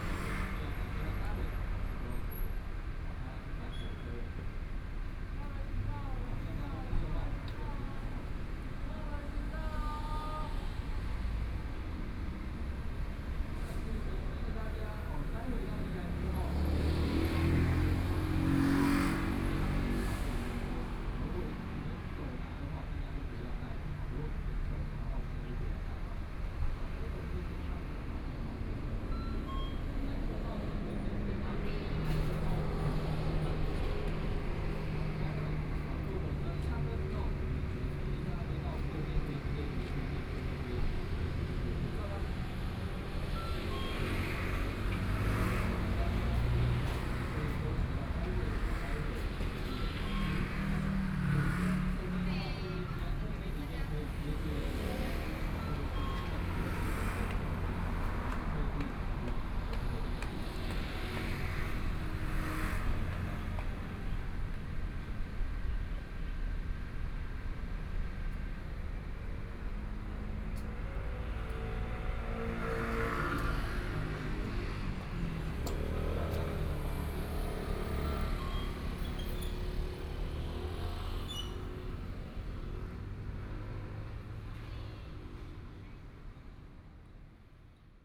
Guoxing 1st St., Hualien City - Sitting intersection
Station regional environmental sounds, In front of a convenience store, Traffic Sound, Binaural recordings, Sony PCM D50+ Soundman OKM II